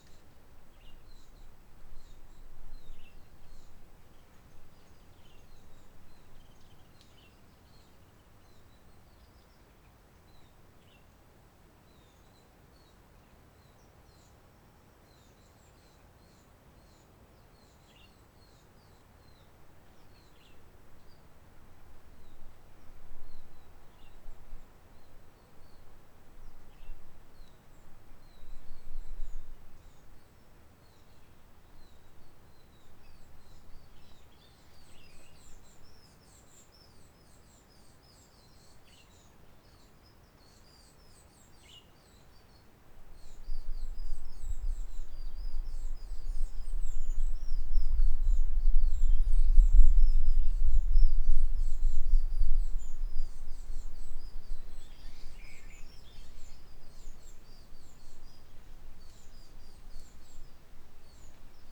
Forêt Roche Merveilleuse, Réunion - 20181120 11h34 lg78rvsa20 ambiance sonore Forêt Matarum CILAOS
INDEX
00:00:00 11h34 à lg78rvsa2084
00:15:12 z'oiseaux verts
00:17:35 passage touristes et plus de oiseaux blancs et verts.
00:18:26 début bruit hélicocoptère de type B4 fin 00:20:00
arrêt relatif des oiseaux.
00:22:12 merle et oiseaux-verts
00:22:58 hélicoptère de type écureuil
00:24:25 fin hélico
arrêt relatif des oiseaux.
00:27:40 reprise oiseaux
00:28:40 peu d'oiseaux
00:31:30 touristes, peu d'oiseaux
20 November 2018